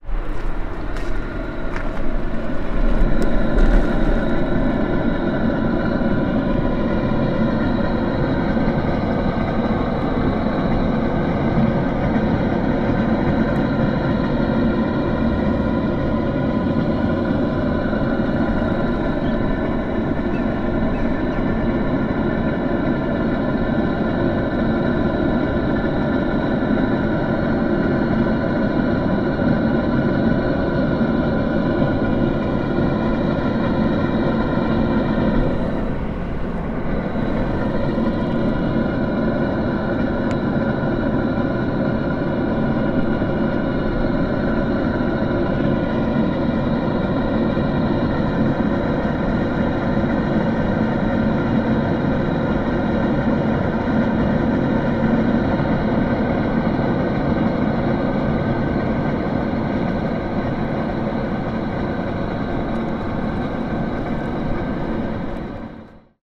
Binckhorst, Laak, The Netherlands - hot air vent
hot air vent recorded with sennheiser ME-66